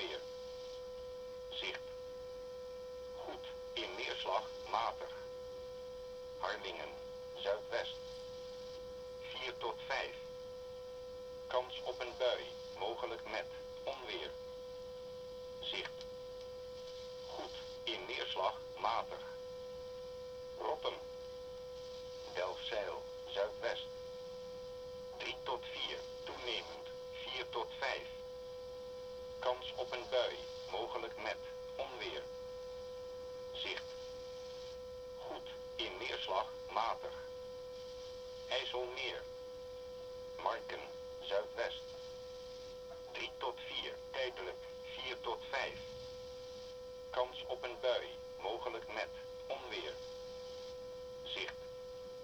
listening to the wheather forecast of the netherlands coastguard at 11 p.m.
the city, the country & me: july 21, 2009
Workum, The Netherlands, 21 July 2009, ~23:00